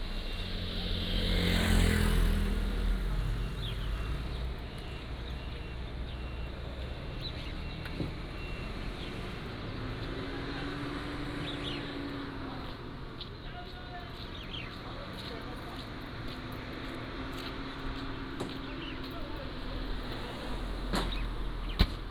In the street, Birds singing, Traffic Sound